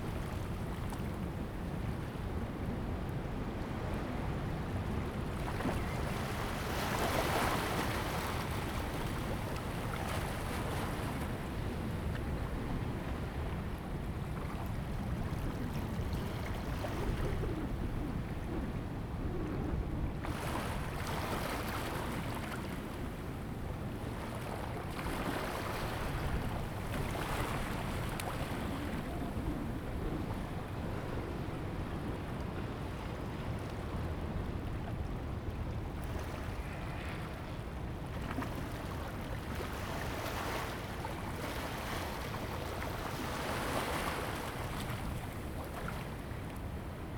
At the fishing port, wave, wind
Zoom H2n MS+XY
2 April 2018, ~13:00